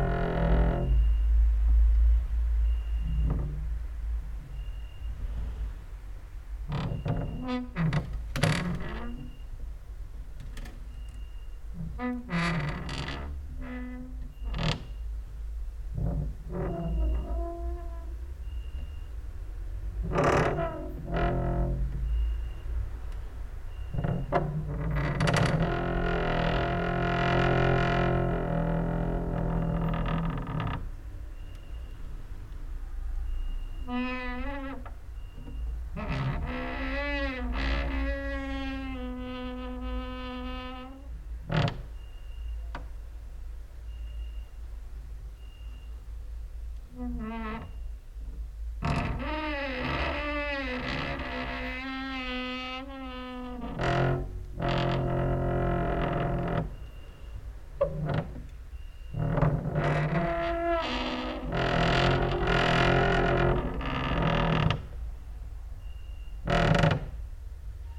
cricket outside, exercising creaking with wooden doors inside